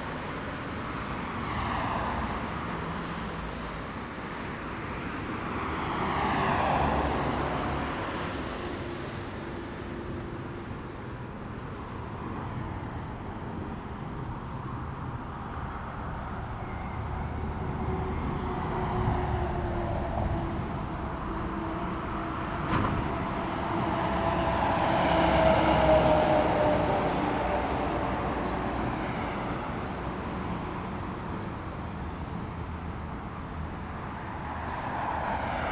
太平市民活動中心, Linkou Dist., New Taipei City - Traffic sound
highway, Traffic sound
Zoom H2n MS+XY